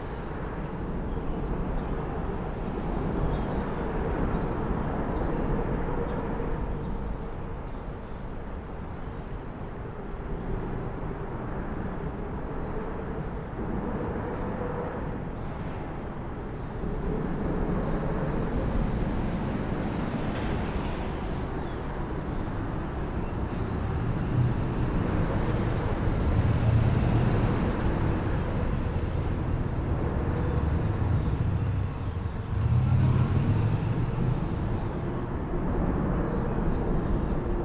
Pulaski Bridge, NY, Verenigde Staten - Under the bridge
Zoom H4n Pro
4 November, ~17:00